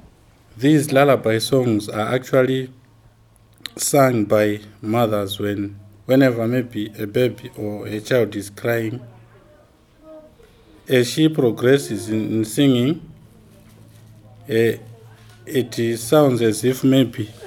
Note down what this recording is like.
...Antony translates and explains in English what Regina's song was about... this was another task for us at the workshop, practicing to translate or summarise in English... again, we found how important descriptions are for a listener to understand and begin to imagine what is involved here... Antony Ncube works at the Ministry for Women Affairs in Binga; Zubo's local stakeholders were also invited to our workshop... the workshop was convened by Zubo Trust, Zubo Trust is a women’s organization bringing women together for self-empowerment.